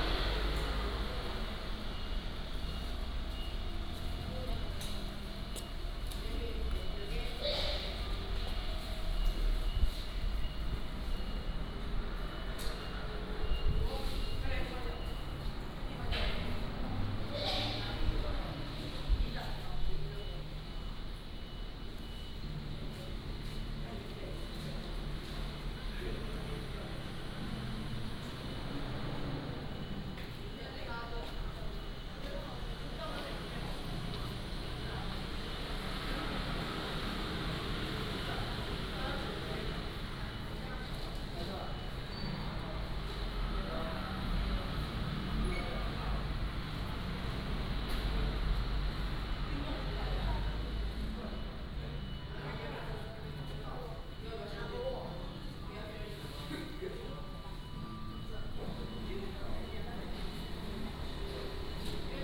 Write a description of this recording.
In the bus station hall, Traffic Sound